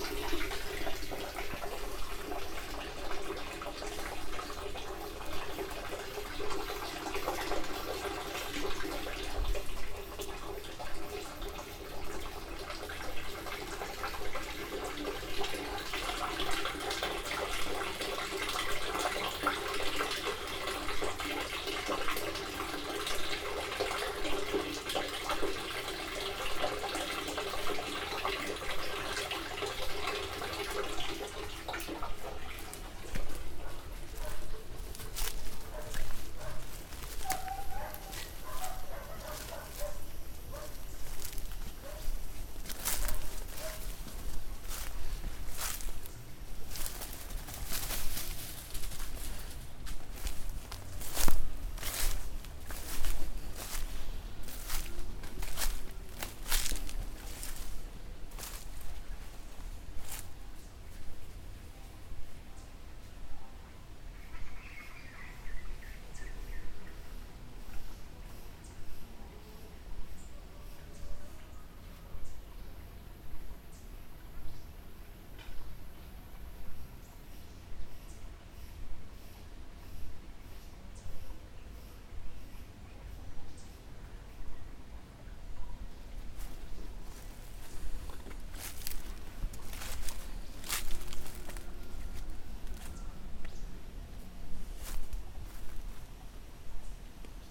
Finca Anatolia, Morrogacho, Paseo junto a la casa
Paseo por los alrededores de la casa
Grillos, Cigarras y campanas